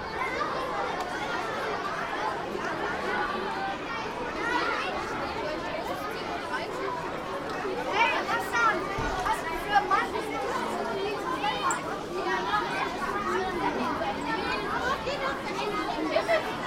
Westend-Süd, Frankfurt, Germany - musicmesse kids